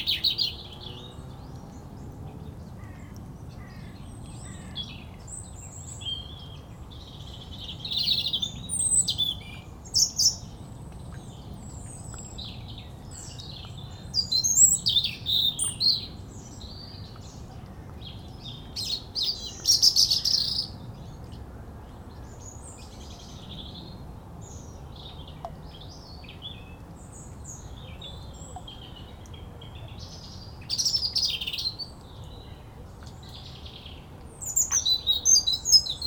Vironvay, France - Robin
A small robin is furious I am here, in its home, and it says me hardly.